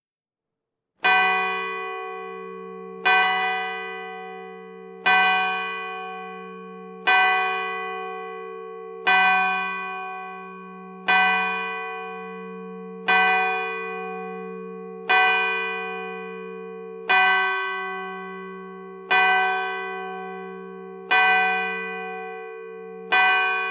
Rue Alphonse Foucault, Senonches, France - Senonches - Église Notre Dame
Senonches (Eure-et-Loir)
Église Notre Dame
12h
13 November 2019, 12:00